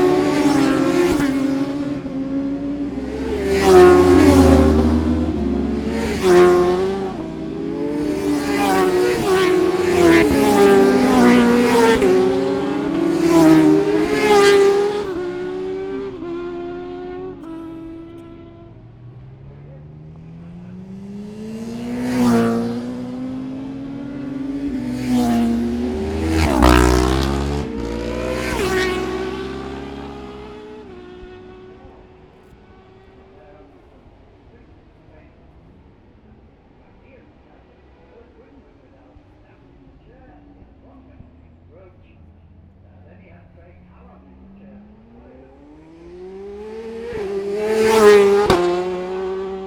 750cc+ qualifying plus some commentary ... Ian Watson Spring Cup ... Olivers Mount ... Scarborough ... open lavalier mics either side of a furry table tennis bat used as a baffle ... grey breezy day ...
Scarborough, UK, 15 April 2012, 11:50